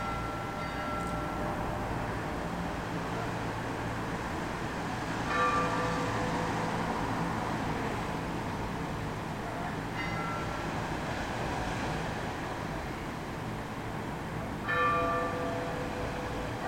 standing in the hotel balcony. Easter evening. calling to mass